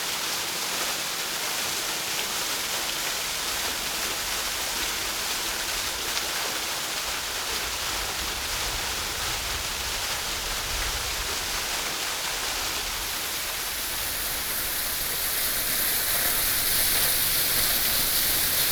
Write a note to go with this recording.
Traffic Sound, In the small mountain next to the waterfall, Sony PCM D50